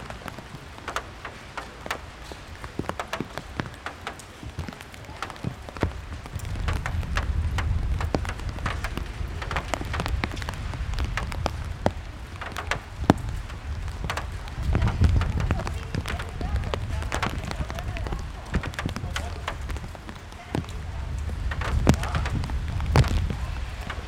raindrops, time, repetition, as a fire sound